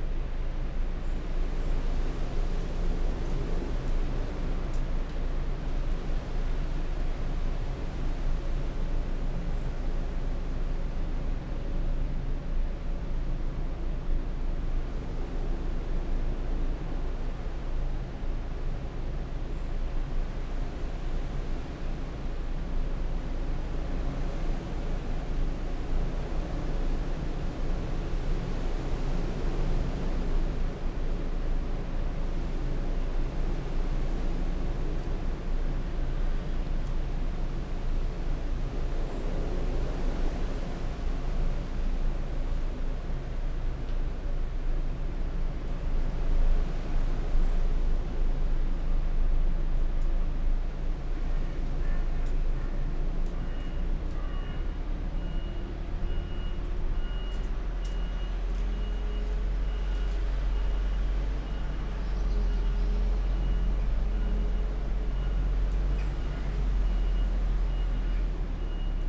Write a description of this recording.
inside church porch ... outside .. on the outskirts of storm erik ... open lavaliers on T bar on tripod ... background noise ... the mating call of the reversing tractor ...